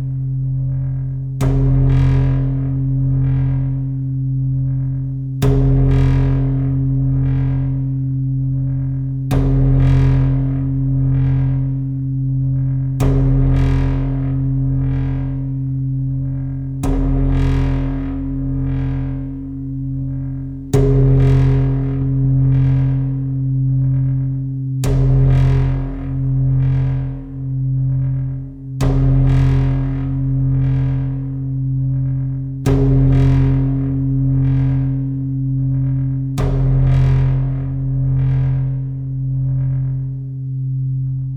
Seraing, Belgium - Playing with a sink
Playing with a sink could be a dangerous activity. In this abandoned factory, I found two huge metallic sink. Huh, this could be a good strange music instrument. So, I'm knocking it. During this time, two romanian people arrive. They were thinking I was destroying it in aim to steal the aluminum (for us and here in Seraing this is absolutely normal). They said me : be careful, there's photographers just near, its dangerous ! And... they saw the recorder, planted in the sink. They had a look to me and really... I think they understood nothing ! Not destroying the sink ?? But what's this weird guy is doing ?? Probably I was lucky not to be molested. So, here is just two minutes of a stupid guy playing with a sink ; when people arrive, I tidy and hide everything as quickly as I can.